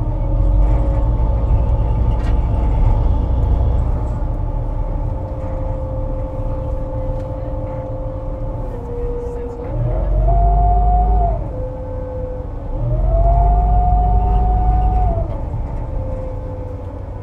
NYC Ferry leaving from Rockaway Beach Terminal towards Manhattan.
Sounds of the ferry's engine (Baudouin 6M26.3)
Zoom H6 + contact mic